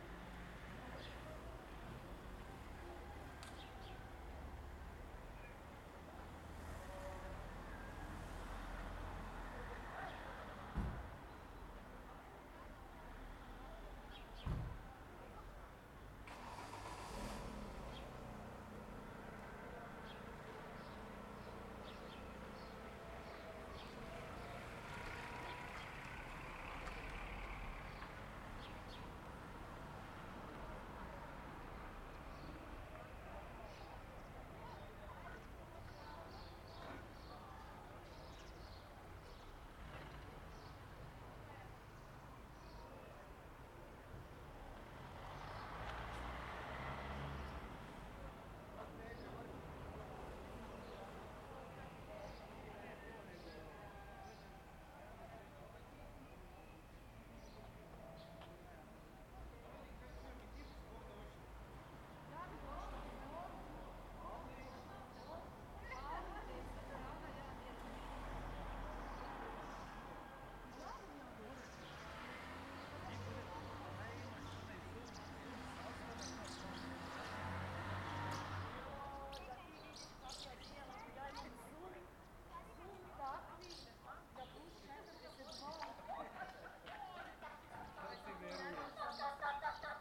{"title": "Church bells - Church bells; Sunday Mass", "date": "2021-07-18 11:57:00", "description": "Church bells at noon. People going home from Sunday Mass. Birds (common house martin) singing. Village life on a Sunday. Recorded with Zoom H2n (XY, on a tripod, windscreen, gain at approximately 8.5).", "latitude": "46.18", "longitude": "16.33", "altitude": "203", "timezone": "Europe/Zagreb"}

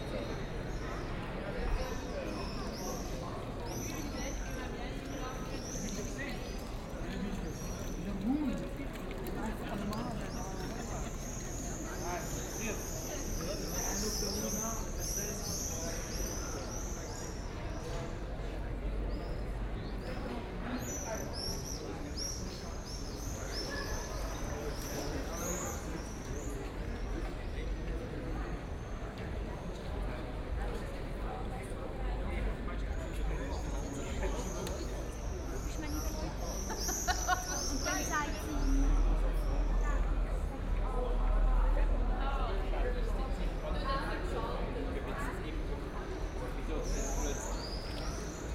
{"title": "Aarau, Center, Schweiz - Rathausgasse Mitte", "date": "2016-06-28 18:23:00", "description": "Continuation of the recording Rathausgasse Anfang, the recording was a walk at an early summer evening and is part of a larger research about headphones.", "latitude": "47.39", "longitude": "8.04", "altitude": "391", "timezone": "Europe/Zurich"}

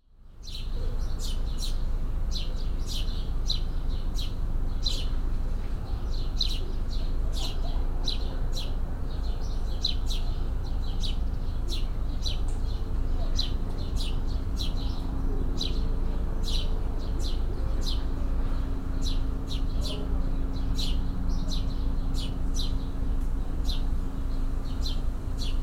{"title": "Denison Square, Toronto Ontario Canada - 43°3914.5N 79°2407.1W, July 17, 8am", "date": "2020-07-17 08:00:00", "description": "This recording is part of a broader inquiry into the limitations of archiving and the visual strata of the places we call “home”.\nI have been (visually) documenting the curated and uncurated other-than human beings found in my front garden located in Kensington Market across the street from a well-used park. “The Market” is a commercial/residential neighbourhood in traditional territory of the Mississaugas of the Credit, the Anishnabeg, the Chippewa, the Haudenosaunee and the Wendat peoples covered by Treaty 13 and the Williams Treaty.\nIt has been home to settler, working class humans through the past decades, and is known to resist change by residents through participatory democracy. Because of rising rents, food sellers are being pushed out and Kensington is becoming Toronto’s new entertainment district. The pandemic has heightened the neighbourhood’s overlapping historical and contemporary complexities.", "latitude": "43.65", "longitude": "-79.40", "altitude": "99", "timezone": "America/Toronto"}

